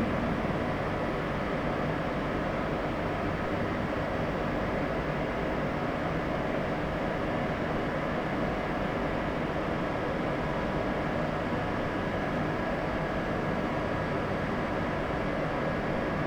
Wrocław Główny, is the largest and most important passenger train station in the city of Wrocław, in southwestern Poland. Situated at the junction of several important routes, it is the largest railway station in the Lower Silesia Voivodeship, as well as in Poland in terms of the number of passengers serviced.
In 2018, the station served over 21,200,000 passengers.
The station was built in 1855–1857, as the starting point of the Oberschlesische Eisenbahn (Upper Silesian Railway), as well as the line from Breslau to Glogau via Posen. It replaced the earlier complex of the Oberschlesischer Bahnhof (Upper Silesian Railway Station, built 1841–1842). Its designer was the royal Prussian architect Wilhelm Grapow, and in the mid-19th century, it was located near the southern outskirts of the city, as the areas to the south had not yet been urbanized.
województwo dolnośląskie, Polska